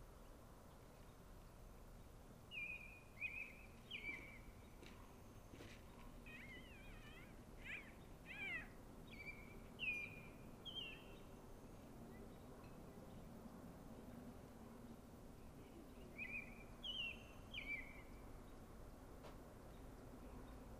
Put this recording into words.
more evening birds...almost time to take the gods for a walk...